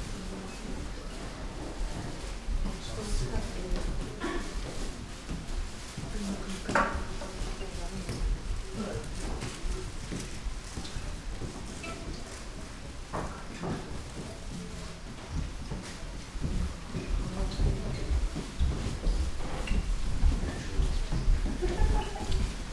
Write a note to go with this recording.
gardens sonority, wooden floor, steps, murmur of people, bamboo tube ... and distorted microphones connection